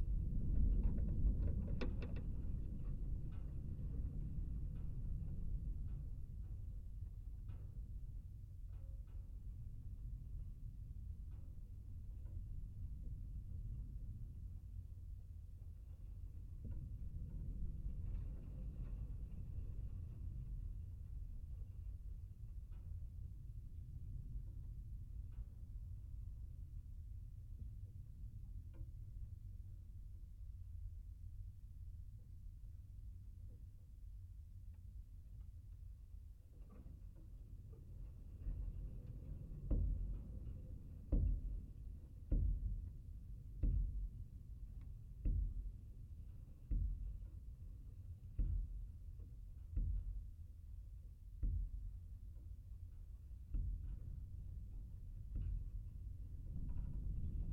Chain link fence at Research Station - chain link fence
The Research Station has a metal chain-link fence to keep out activists and protesters. The fence is covered by CCTV. The sun was hot making the metal expand and contract.
Stereo pair Jez Riley French contact microphones + SoundDevicesMixPre3